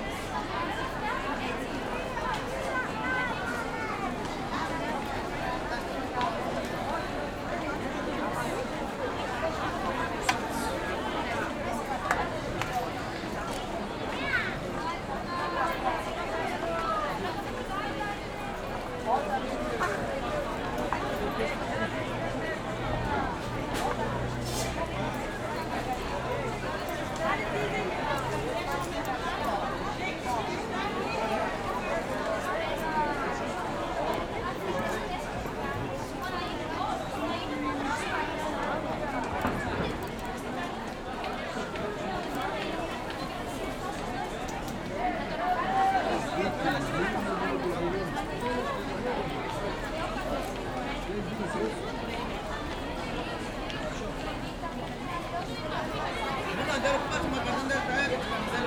Xanthi, Greece - Big bazaar ambience
Bazaar ambience recorded in Xanthi, Greece on Saturday morning. The bazaar
takes place in the centre of the city each Saturday and it is renowned for
its oriental character and the diversity of merchandise on display filled
with colours, sounds and life.